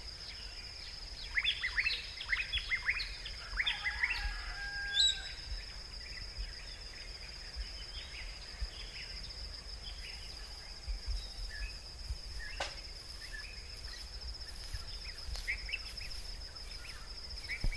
N Coast Rd, Sapapalii, Samoa - Village morning
July 2000